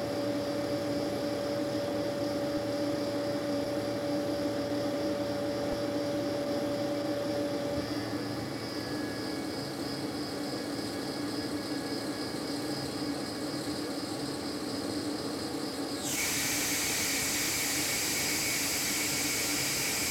La Friche - Exterieur / Tentative - Ventilateur stradis Friche Orgeval, 51100 Reims, France
Le ventilateur Stradis